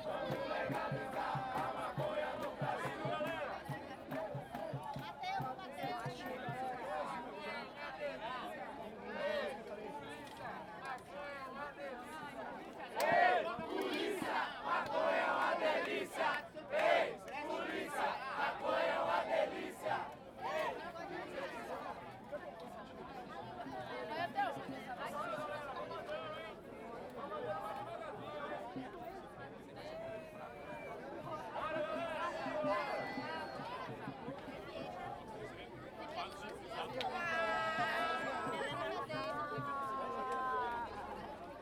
Salvador, Bahia, Brazil - Marijuana March
A peaceful legalise marijuana march in Salvador, Brazil
1 June, 16:20